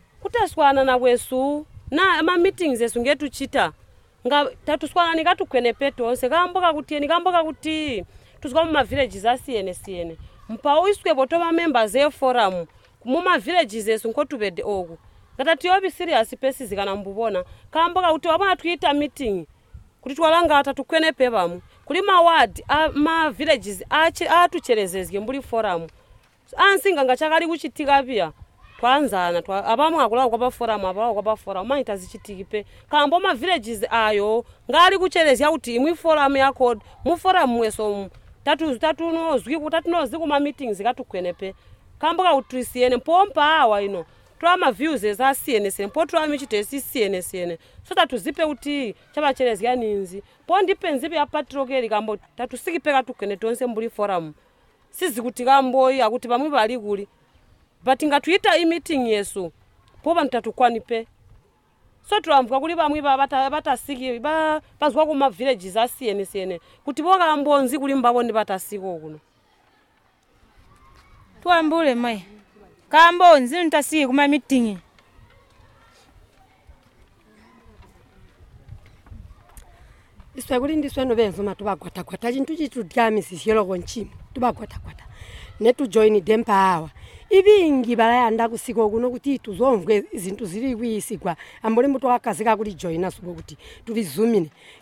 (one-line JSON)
{"title": "Chinonge, Binga, Zimbabwe - Chinonge Women's Forum presents...", "date": "2016-06-16 11:55:00", "description": "...this is how it sounds when the women of Zubo's Chinonge Women’s Forum meet, present their project work to each other and discuss their activities in the community…\nZubo Trust is a women’s organization bringing women together for self-empowerment.", "latitude": "-18.00", "longitude": "27.46", "altitude": "846", "timezone": "GMT+1"}